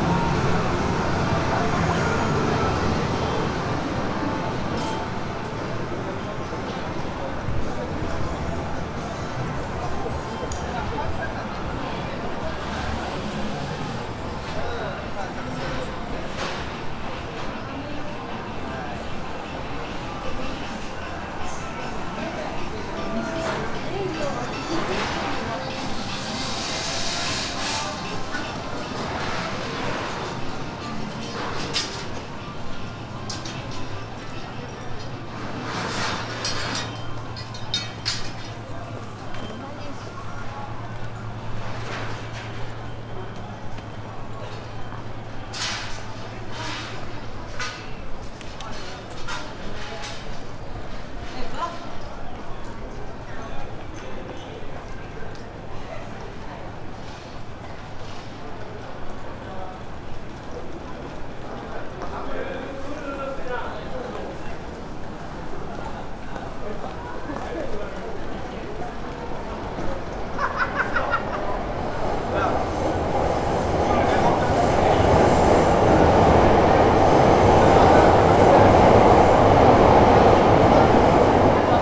ameyoko street, ueno station, tokyo - fish market, under JR railway tracks
late evening, small fish restaurants, roaring trains